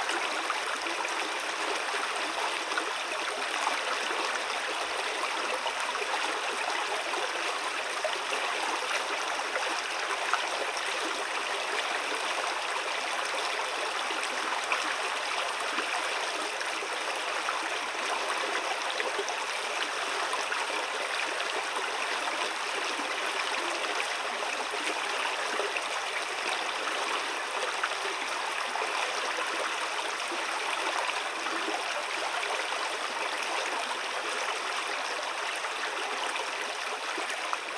Diktarai, Lithuania, river Laimutis
Ambisonic recording at river Laimutis, near Buivydai mound
Utenos apskritis, Lietuva, 1 May 2022, 16:00